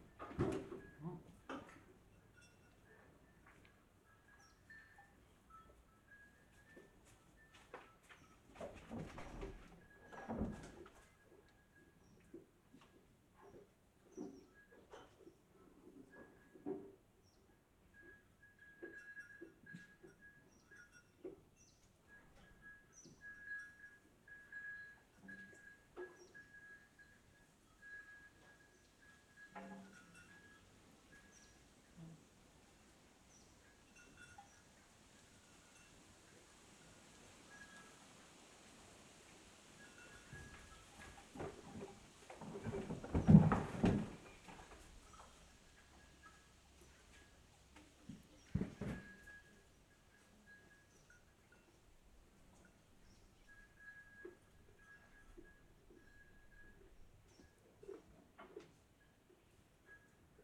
Ventejís, Valverde, Hierro, Santa Cruz de Tenerife, Santa Cruz de Tenerife, España - Silencio en la granja de Manuel
Recording made at the farm of Manuel.